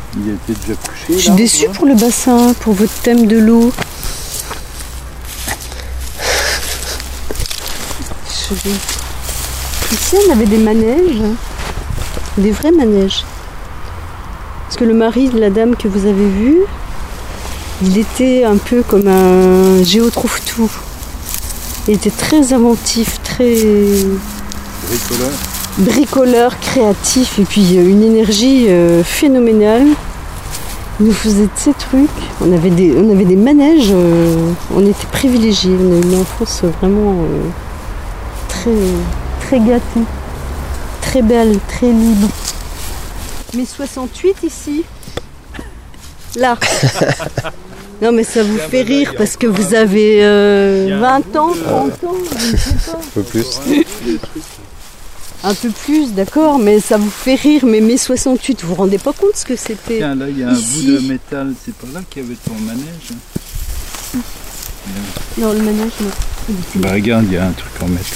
Agnès - Rotchat / Travexin, France - Agnès, Alla baignesse
Agnès revient dans l'ancienne école, lieu de son enfance.
Dans le cadre de l’appel à projet culturel du Parc naturel régional des Ballons des Vosges “Mon village et l’artiste”